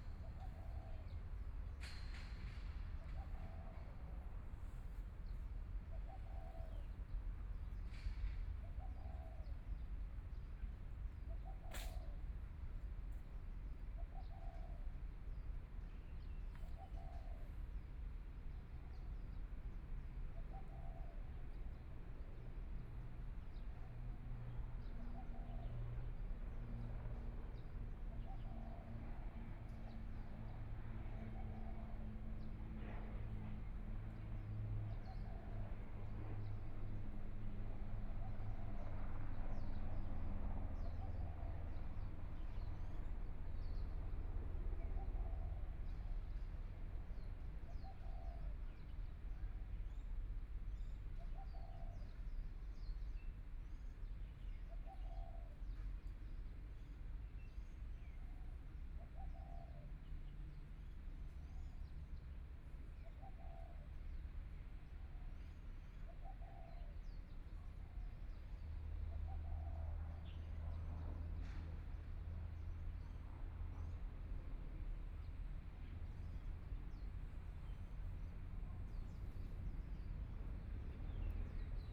慈濟醫院花蓮院區, Taiwan - birds sound
Aircraft flying through, Environmental sounds, birds sound
Binaural recordings
Zoom H4n+ Soundman OKM II
24 February 2014, 10:34